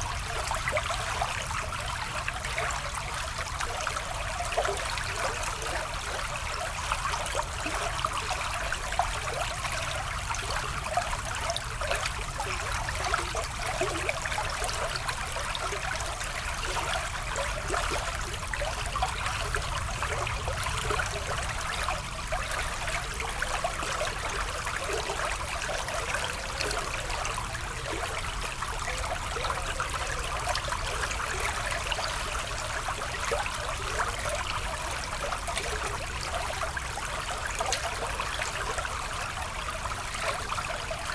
Mont-Saint-Guibert, Belgique - Houssière river
The Houssière river, flowing in the small and quiet village of Hévillers.
Mont-Saint-Guibert, Belgium, August 2016